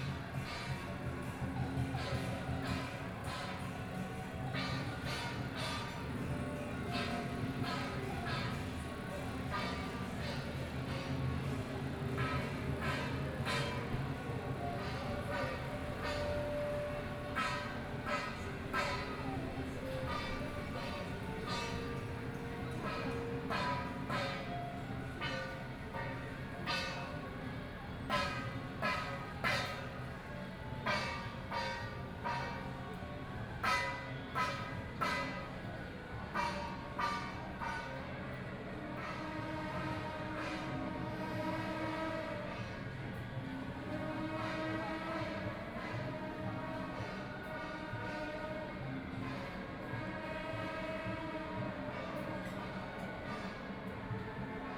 Zhongzheng District, Taipei - temple festivals
Traditional temple festivals, Through a variety of traditional performing teams, Binaural recordings, Zoom H6+ Soundman OKM II
Taipei City, Taiwan